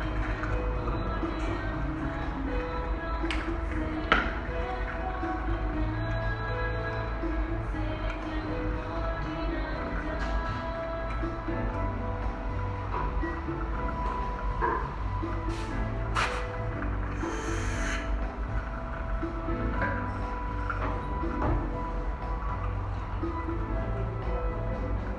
Cra., Medellín, Antioquia, Colombia - Cita odontológica
Descripción
Sonido tónico: Agua fluyendo, música de ambiente
Señal sonora: Utensilio dental, intervención odontólogo
Micrófono dinámico (Celular)
Altura 1 metro
Duración 3:11
Grabado por Daniel Zuluaga y Luis Miguel Henao